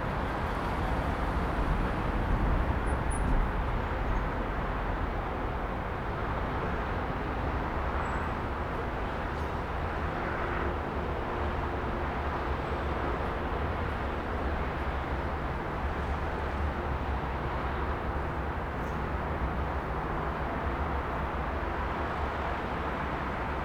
Campolide, Portugal - Horta da Rua A
Rua A, Serafina, Lisbon
21 October, 15:38